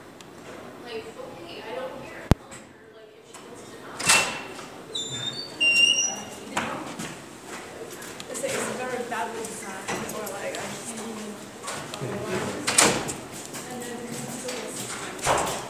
Vassar College, Raymond Avenue, Poughkeepsie, NY, USA - Night Watch
This is a soundscape of the Jewitt House lobby during a patrol shift. This recording demonstrates typical anthrophony for the specified time and location, featuring socializing students, well-used keyboards, patrol radio transmissions, and piano music from a nearby parlor.